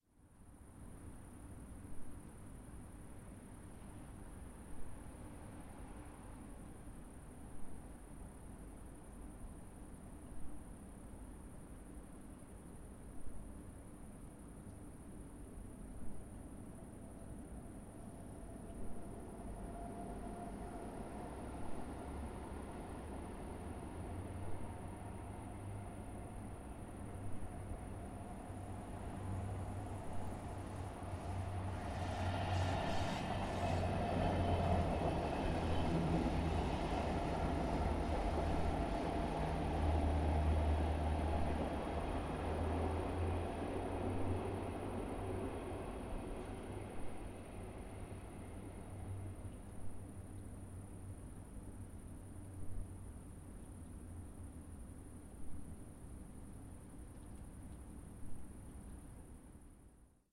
Escher Wyss, Zürich, Sound and the City - Sound and the City #27
Schizophone Vielfalt widersprüchlicher Klänge: Tram, Zug, Flugzeug, Autos, Brunnen, Grillen... Der Ort zwischen Schrebergärten, Fragmenten des geplanten Parks, einer ins Nirgendwo führenden Fussgängerbrücke und Baustellen ist um diese Zeit menschenleer. Ein tiefer, elektrisch gefärbter Drone ist nicht ortbar, teilweise vielleicht von der vielbefahrenen Pfingstweidstrasse stammend. Grillen sowie ein isoliet dastehender Brunnen werden vom Fade-in und Fade-out eines Zuges (links), eines Trams (rechts) sowie eines Flugzeugs (oben) maskiert.
Art and the City: Paul McCarthy (Apple Tree Boy Apple Tree Girl, 2010)